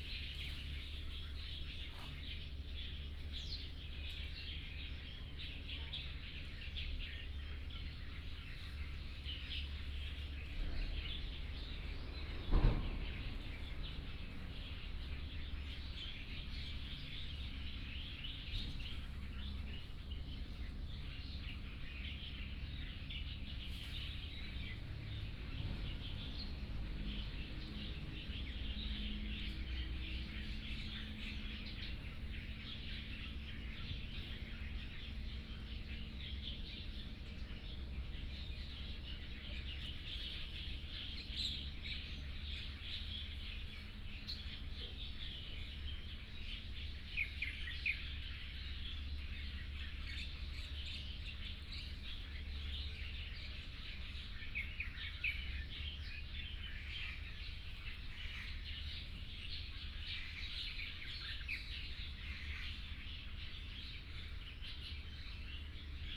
Birdsong, Traffic Sound, In the bridge below